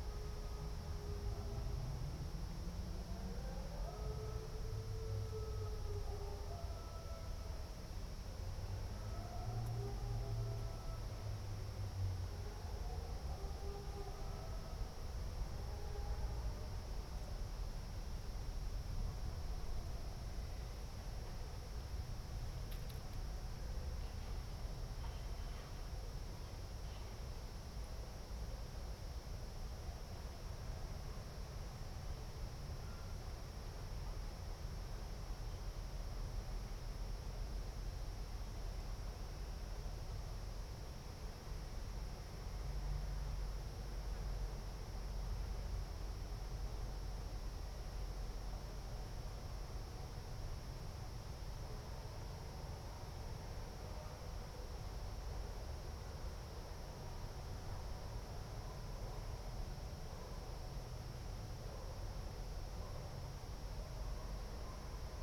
Ascolto il tuo cuore, città, I listen to your heart, city. Several chapters **SCROLL DOWN FOR ALL RECORDINGS** - Terrace August 19th afternoon in the time of COVID19 Soundscape
"Terrace August 19th afternoon in the time of COVID19" Soundscape
Chapter CXXVI of Ascolto il tuo cuore, città. I listen to your heart, city
Wednesday, August 19th, 2020. Fixed position on an internal terrace at San Salvario district Turin five months and nine days after the first soundwalk (March 10th) during the night of closure by the law of all the public places due to the epidemic of COVID19.
Start at 2:35 p.m. end at 3:15 p.m. duration of recording 40'00''
Go to Chapter CXXIV for similar situation.